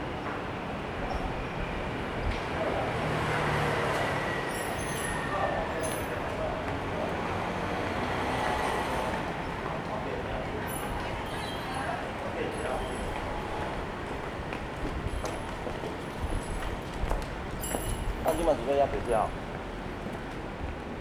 Nanzih - Metropolitan Park Station
Station exit, Traffic Noise, Sony ECM-MS907, Sony Hi-MD MZ-RH1
楠梓區 (Nanzih), 高雄市 (Kaohsiung City), 中華民國, March 2012